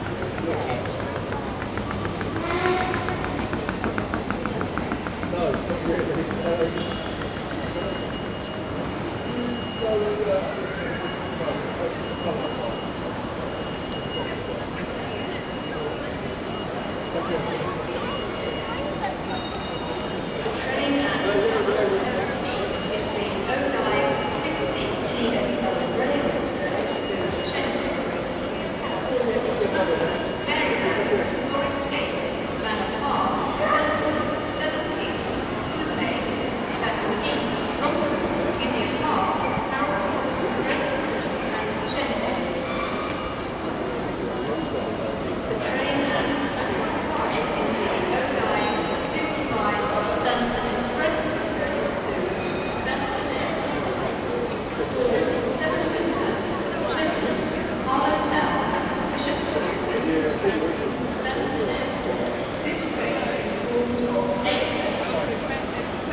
{"title": "Liverpool Street Station, London, UK - Liverpool Street Station Concourse.", "date": "2018-04-07 09:40:00", "description": "Train and security announcements and general background sounds.\nRecorded on a Zoom H2n.", "latitude": "51.52", "longitude": "-0.08", "altitude": "14", "timezone": "Europe/London"}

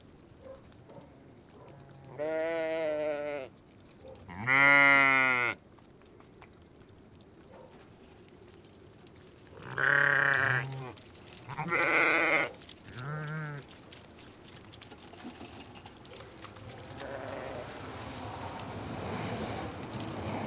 {"title": "Fawcett Forest, Cumbria, UK - Brian Knowles's Rams", "date": "2012-01-04 11:30:00", "description": "This is the sound of Brian Knowles's Rams when we went to feed them. You can hear Brian shaking the food for them, as sheep are generally pretty quiet in the winter time, but soon get noisy if they sense the possibility of food to hand! I am not completely certain that I have located this file correctly, but it's the best I can do with the maps and the memories I have of travelling around the Lake District last January. Brian and Jane Knowles live at High Borrow Farm in Selside, but their land from memory seemed to stretch in several directions, and a lot of it was right there on the main road.", "latitude": "54.40", "longitude": "-2.70", "altitude": "219", "timezone": "Europe/London"}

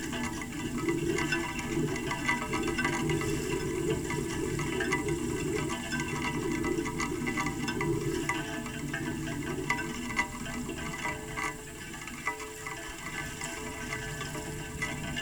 {"title": "Lithuania, Tauragnai, metallic hedge", "date": "2012-08-31 16:40:00", "description": "recorded with contact microphone. some metallic hedge protecting a young oak tree on the shore", "latitude": "55.45", "longitude": "25.87", "altitude": "161", "timezone": "Europe/Vilnius"}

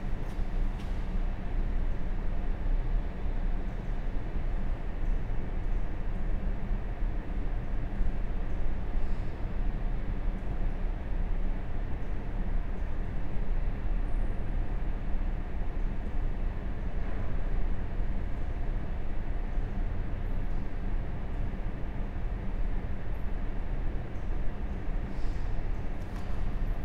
{"title": "Valpy St, Reading, UK - JM Art Gallery, Reading Museum", "date": "2017-11-08 14:30:00", "description": "Ten minute meditation in the John Madjeski Art Gallery at Reading Museum. School children chat in the room next door then begin to leave, a member of museum staff sits in silence, glued to her iPad, until a visitor arrives and asks questions (spaced pair of Sennheiser 8020s with SD MixPre6)", "latitude": "51.46", "longitude": "-0.97", "altitude": "50", "timezone": "Europe/London"}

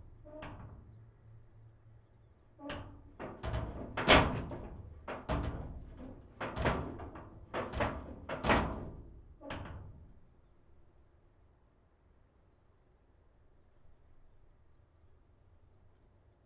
{"title": "Fortizza, Bengħajsa, Birżebbuġa, Malta - wind hits iron door", "date": "2017-04-03 15:15:00", "description": "near fort Bengħajsa, the wind hits an iron door at a seemingly abandoned house.\n(SD702)", "latitude": "35.81", "longitude": "14.53", "altitude": "48", "timezone": "Europe/Malta"}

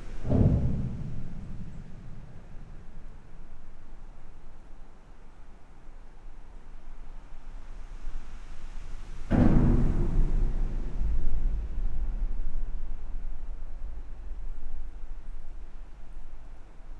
{"title": "Dinant, Belgium - Charlemagne bridge", "date": "2017-09-29 10:15:00", "description": "Recording of the Charlemagne bridge from the outside. The impact noises come from the seals.", "latitude": "50.24", "longitude": "4.92", "altitude": "166", "timezone": "Europe/Brussels"}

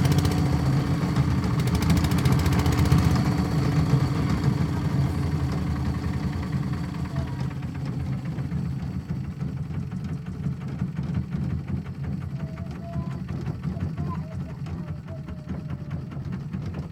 {"title": "Koh Lipe, Thailand - drone log 28/02/2013", "date": "2013-02-28 12:47:00", "description": "long tail boat engine\n(zoom h2, build in mic)", "latitude": "6.50", "longitude": "99.31", "timezone": "Asia/Bangkok"}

